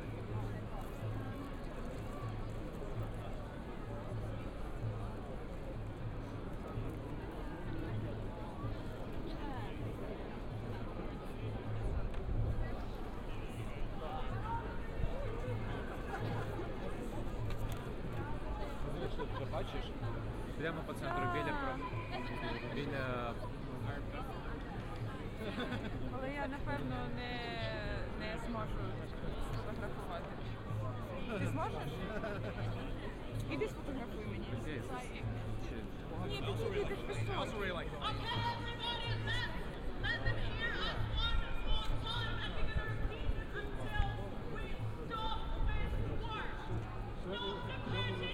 Horse Guards Parade and Whitehall - Stand with Ukraine! London Anti-War Rally, Whitehall. 26 February 2022
About twenty minutes at the 'Stand with Ukraine!' Anti-War Rally in London. Binaural recording made with Tascam DR-05, Roland CS-10EM binaural microphones/earphones.